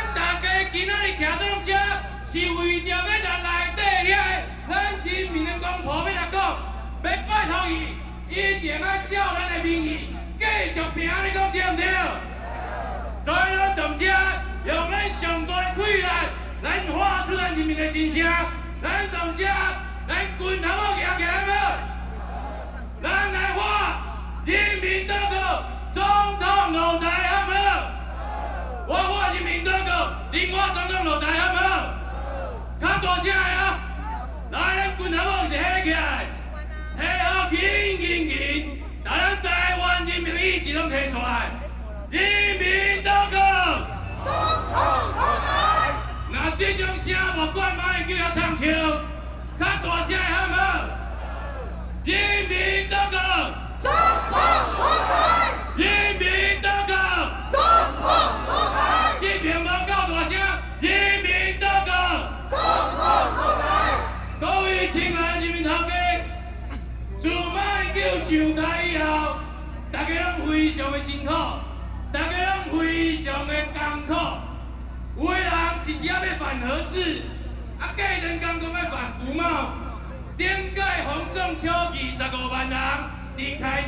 Protest rally, Shouting slogans, Binaural recordings, Sony PCM D50 + Soundman OKM II
中正區 (Zhongzheng), 台北市 (Taipei City), 中華民國, 15 October 2013, ~10:00